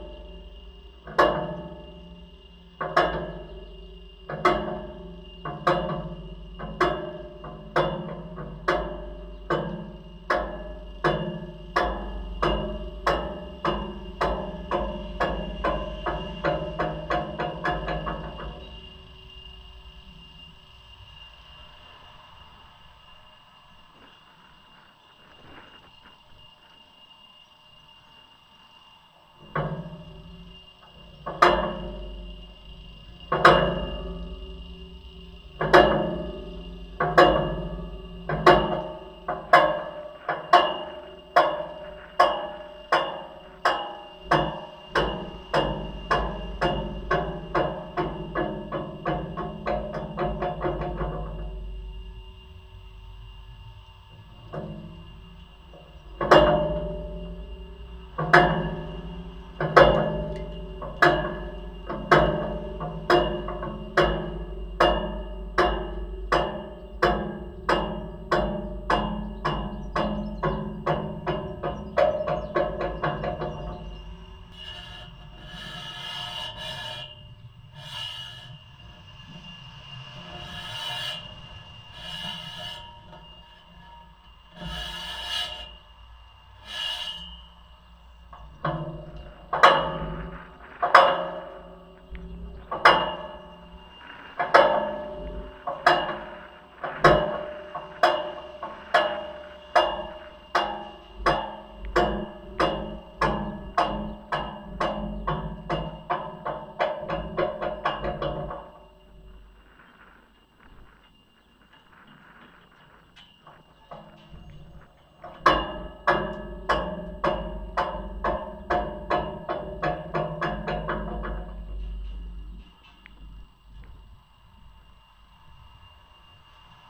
{"title": "Villaviciosa de Odón, Madrid, Spain - Tourniquet (Contact)", "date": "2015-05-25 11:14:00", "description": "A metallic revolving door. A pair of contact mics. Fun.", "latitude": "40.37", "longitude": "-3.92", "timezone": "Europe/Madrid"}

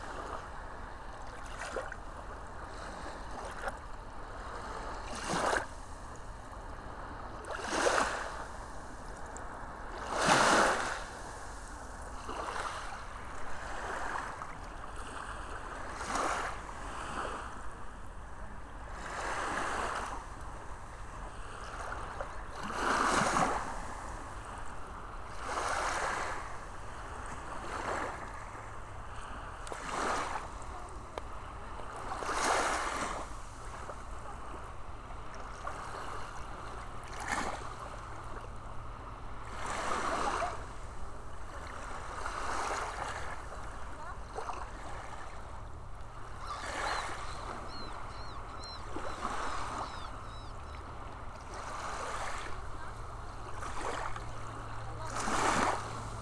{"title": "Wyspa Sobieszewska, Gdańsk, Poland - Na plaży", "date": "2015-05-06 10:45:00", "description": "Na plaży, chodzenia po piasku i morze.", "latitude": "54.35", "longitude": "18.91", "altitude": "16", "timezone": "Europe/Warsaw"}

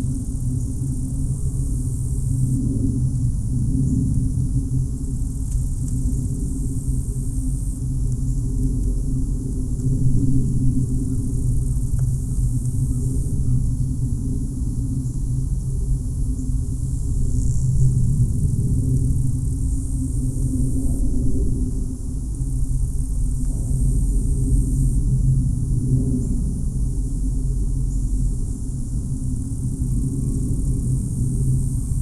{"title": "Fayette County, TX, USA - Inside Solis Ranch Pipe", "date": "2015-09-06 13:36:00", "description": "Recorded inside an exposed segment of metal pipe on a Maranatz PMD661 and a pair of DPA 4060s.", "latitude": "30.13", "longitude": "-96.82", "altitude": "134", "timezone": "America/Chicago"}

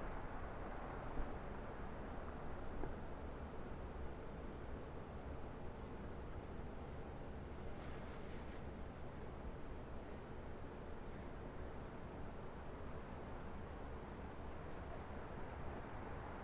{"title": "S Gilbert St, Iowa City, IA, USA - Iowa City Street", "date": "2022-01-23 21:15:00", "description": "Recorded on top of the railroad track above S. Gilbert St. Recorded on H5N Zoom", "latitude": "41.65", "longitude": "-91.53", "altitude": "200", "timezone": "America/Chicago"}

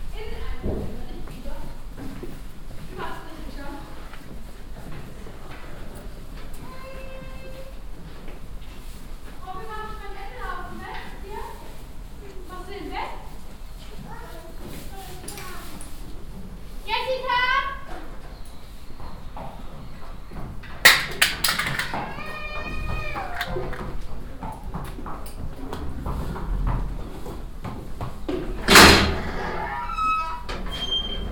{"title": "lünen, alter postweg, horse stable", "description": "inside a horse stable, the sound of eating and snorring horses, steps and kids on the cobble stone pavement\nsoundmap nrw - social ambiences and topographic field recordings", "latitude": "51.59", "longitude": "7.55", "altitude": "60", "timezone": "Europe/Berlin"}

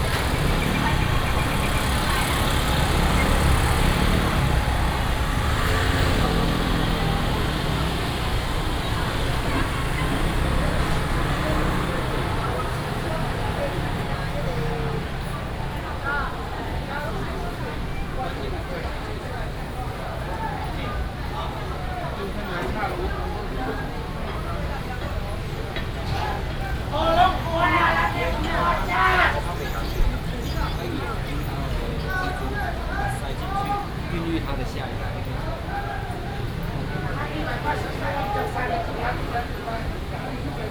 {"title": "日新市場, Dali Dist., Taichung City - vendors peddling", "date": "2017-09-19 10:30:00", "description": "traditional market, traffic sound, vendors peddling, Binaural recordings, Sony PCM D100+ Soundman OKM II", "latitude": "24.11", "longitude": "120.69", "altitude": "61", "timezone": "Asia/Taipei"}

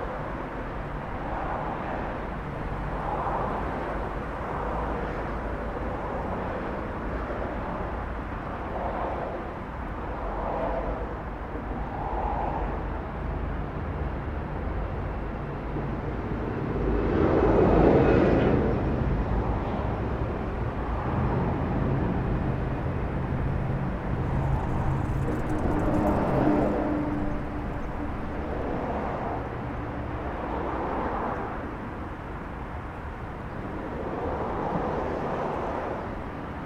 {"title": "train and car traffic, Muggenhof/Nuremberg", "date": "2011-04-15 11:15:00", "description": "cut effect heard from car traffic on a sunken road, Muggenhof", "latitude": "49.46", "longitude": "11.02", "altitude": "302", "timezone": "Europe/Berlin"}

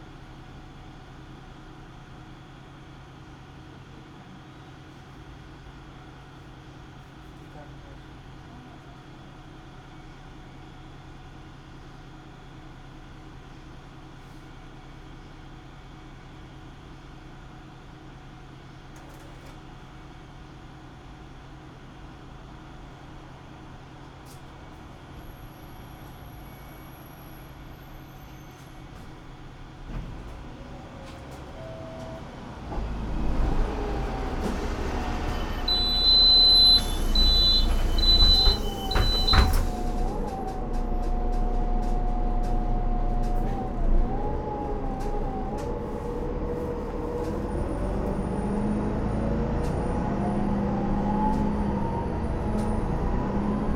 {"title": "Poznan, Sobieskiego Bus Depot - line 93", "date": "2012-08-28 22:16:00", "description": "waiting for the bus to depart + ride 3 stops.", "latitude": "52.46", "longitude": "16.92", "altitude": "92", "timezone": "Europe/Warsaw"}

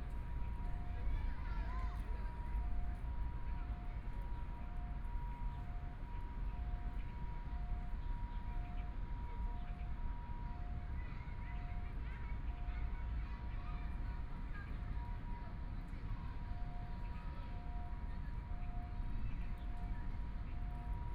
{"title": "榮星公園, Zhongshan District - in the Park", "date": "2014-01-20 15:23:00", "description": "Afternoon park, Dogs barking, People walking in the park and rest, Traffic Sound, Binaural recordings, Zoom H4n + Soundman OKM II", "latitude": "25.06", "longitude": "121.54", "timezone": "Asia/Taipei"}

